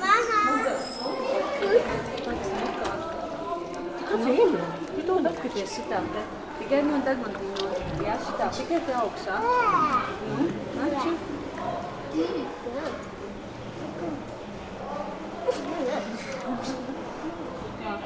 Inside a pig shed on a local farm yard. A pig peeing, a water bucket, pig mouth sounds and some talking visitors.
Hupperdange, Bauernhof, Schweinestall
In einem Schweinestall auf einem regionalen Bauernhof. Ein Schwein lässt Wasser, ein Wasserkübel, Geräusche vom Schweinemaul und einige sprechende Besucher. Aufgenommen von Pierre Obertin während eines Stadtfestes im Juni 2011.
Hupperdange, ferme, porcherie
Dans la porcherie d’une ferme de la région. Un cochon urine, un seau d’eau, le bruit de la gueule du cochon et la discussion de quelques visiteurs.
Enregistré par Pierre Obertin en mai 2011 au cours d’une fête en ville en juin 2011.
Project - Klangraum Our - topographic field recordings, sound objects and social ambiences